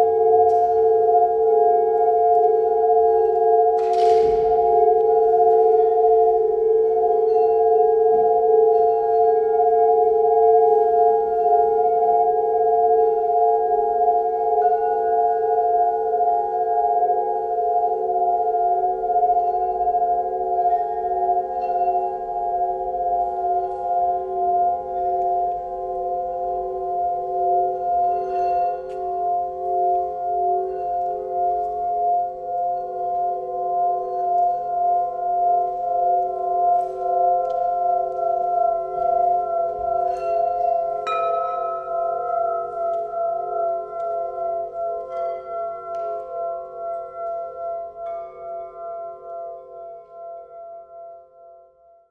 trafacka, lydes sound performace
Fragment from the sound performance of Dan Senn at the Trafačka new music festival Echoflux. The lydes are played by Dan Senn, Anja Kaufman, Petra Dubach, Mario van Horrik and George Cremaschi.